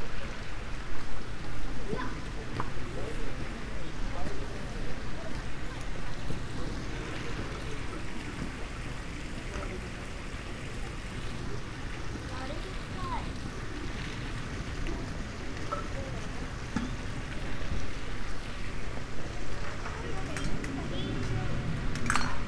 hase-dera, kamakura, japan - garden path
walk through garden just before closing time, steps, stones, waters, passers-by, birds